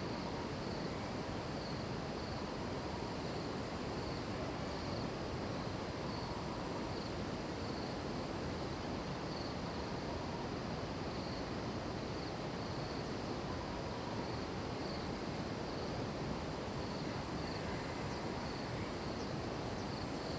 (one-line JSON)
{"title": "Taomi Ln., Puli Township, 埔里鎮桃米里 - In the morning", "date": "2015-08-13 05:49:00", "description": "Beside farmland, Cicada sounds, Birds singing, Insects sounds, The sound of water streams\nZoom H2n MS+XY", "latitude": "23.94", "longitude": "120.94", "altitude": "452", "timezone": "Asia/Taipei"}